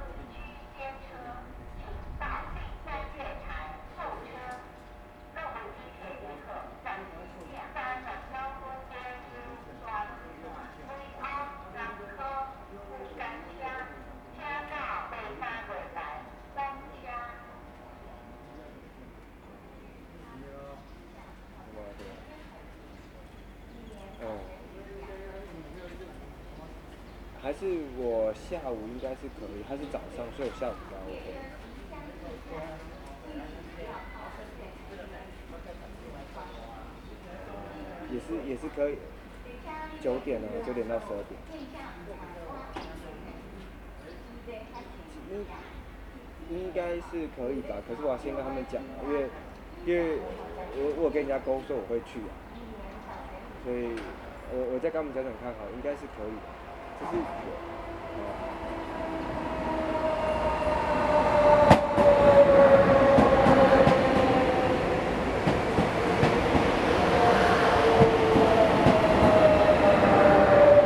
Zhongzhou Station - Waiting
in the Platform, Station broadcast messages, Train traveling through, Construction noise, Sony ECM-MS907, Sony Hi-MD MZ-RH1